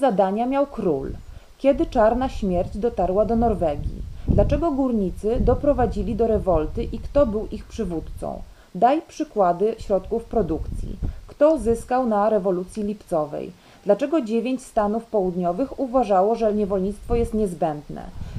Каптаруны, Беларусь - Justyna Czechowska is reading on the panel discussion about translation
International open air forum Literature Intermarium
Jaciūnai, Lithuania